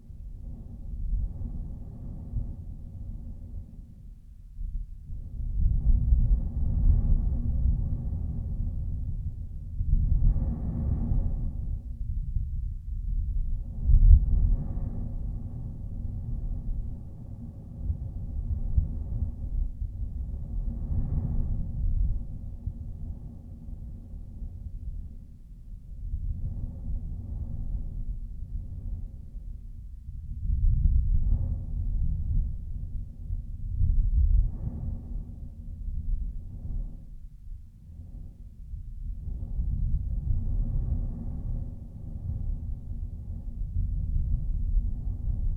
Luttons, UK - fires out ... mics in ...
fires out ... mics in ... lavalier mics in the stove and the sound of the draught up the chimney ...
12 March, 05:00